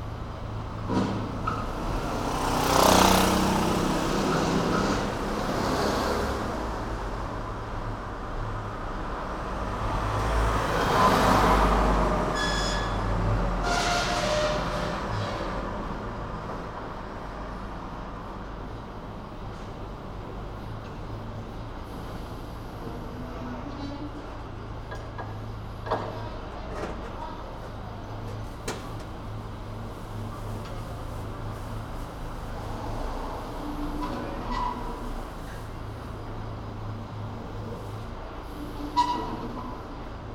chome nezu, tokyo - street window